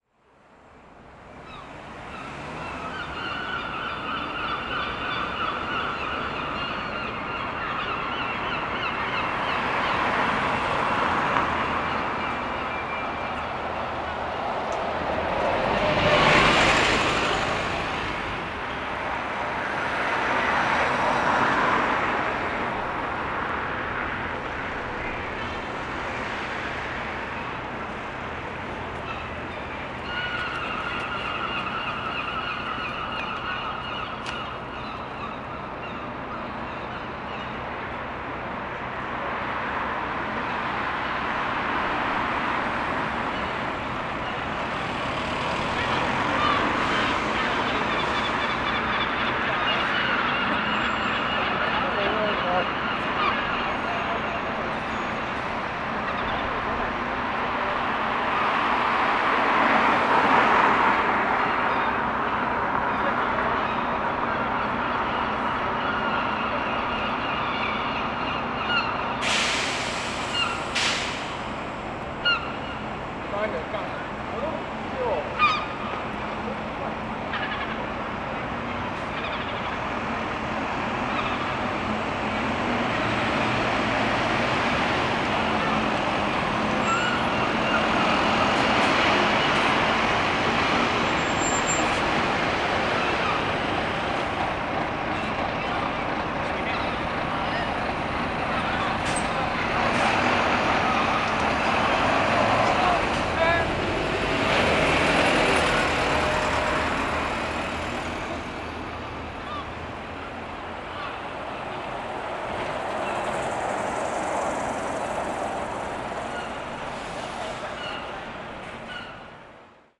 Belfast City Hall
A starting point for locals and tourists, it points us in different directions to go. Whether that is for shopping, drinking, grabbing a quick snack, or finding local tours. Yet, the only thing that truly remains is the scheduled buses that pass in front of the City Hall, and the one lady who feeds a group of seagulls in front of the Burger King.